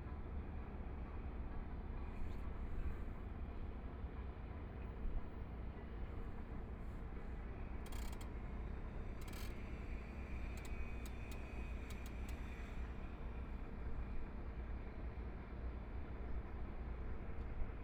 Power Station of Art, Shanghai - The top floor of the museum
Standing on the top floor of the museum platform, Construction site sounds, There are many boats traveling the river by, Binaural recording, Zoom H6+ Soundman OKM II
Shanghai, China, 2 December 2013